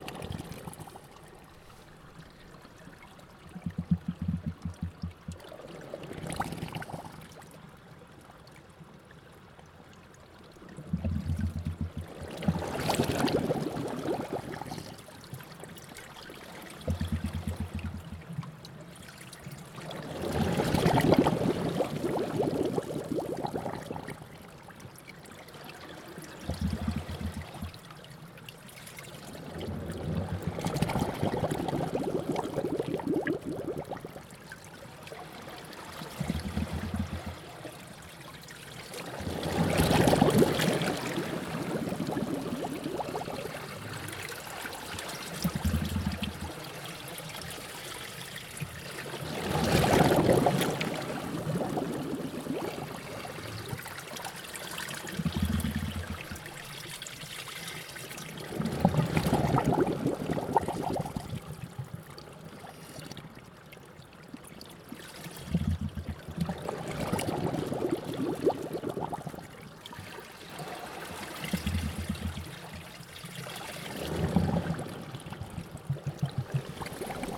loading... - thorne bay lake outlet
Thorne Bay outflow of Lake Pupuke, lake water flowing into inter-tidal zone
Auckland, New Zealand / Aotearoa, 20 August